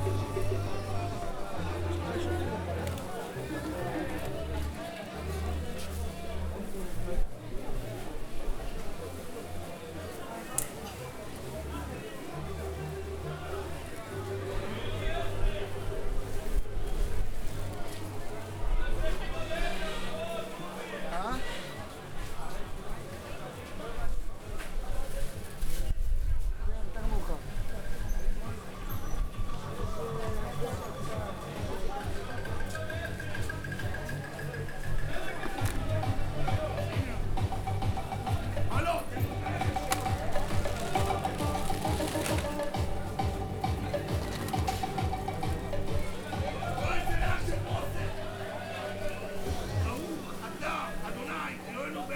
Yehiel Michel Pines St, Jerusalem, Israël - Roaring market
Heavy music, indoor market sounds and roaring men. (Recorded with Zoom 4HN)